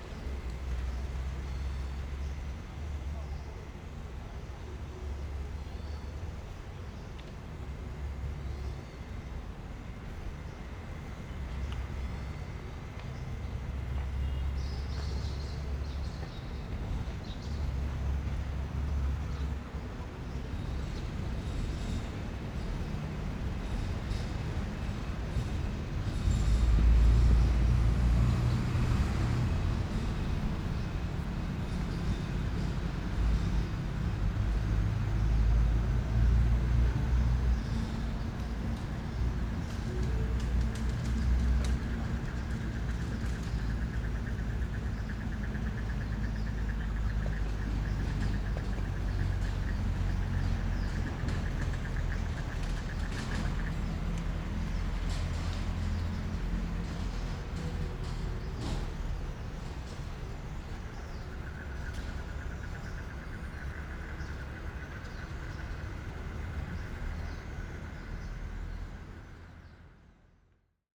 Nangang Park, Taipei - Frogs calling
Frogs calling, Rode NT4+Zoom H4n
南港區, 台北市 (Taipei City), 中華民國